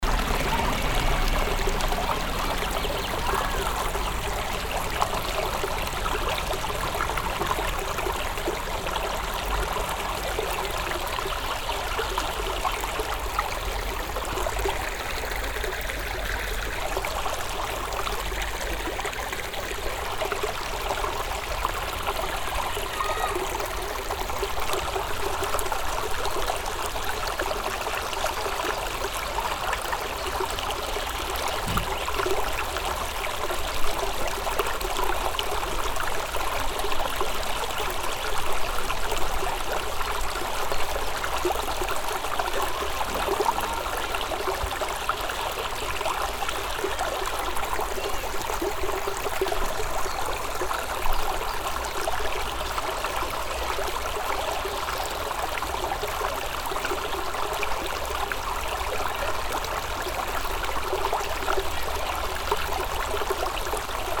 troisvierges, small stream
The sound of a small vivid stream running here under the shadow of some bigger trees. In the distance a passing train.
Troisvierges, Kleiner Fluss
Das Geräusch von einem kleinen lebhaften Bach, der hier im Schatten von etwas größeren Bäumen fließt. In der Ferne ein vorbeifahrender Zug.
Troisvierges, petit ruisseau
Le son d’un petit ruisseau vif coulant ici à l’ombre de gros arbres. Dans le lointain, on entend un train qui passe.
Project - Klangraum Our - topographic field recordings, sound objects and social ambiences
Troisvierges, Luxembourg, 11 July